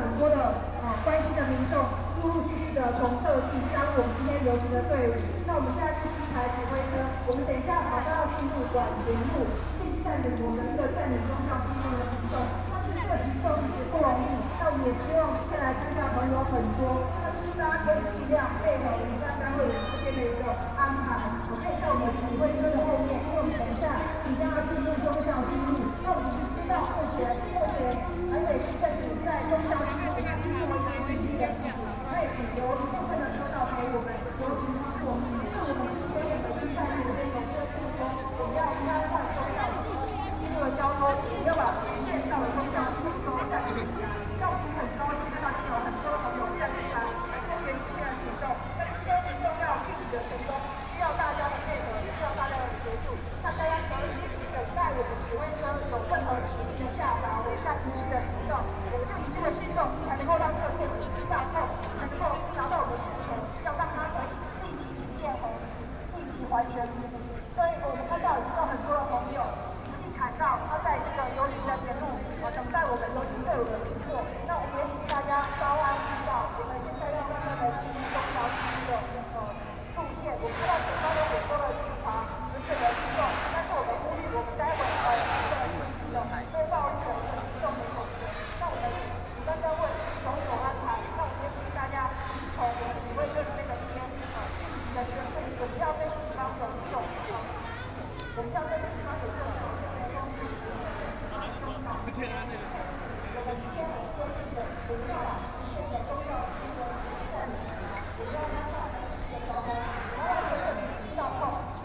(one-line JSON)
{"title": "National Taiwan Museum, Taipei City - Protest", "date": "2014-04-27 15:48:00", "description": "Opposition to nuclear power, Protest\nSony PCM D50+ Soundman OKM II", "latitude": "25.04", "longitude": "121.52", "altitude": "21", "timezone": "Asia/Taipei"}